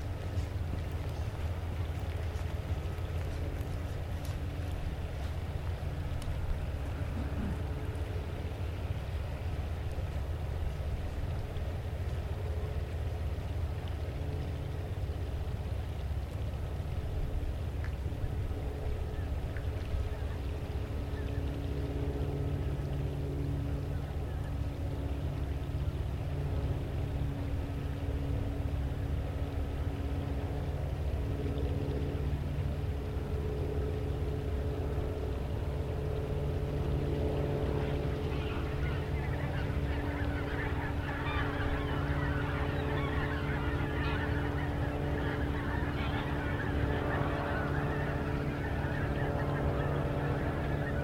Veerweg, Bronkhorst, Netherlands - Kunstgemaal Aeroplane attacking Geese
Aeroplane, geese. Road and river traffic in distance
Zoom H1.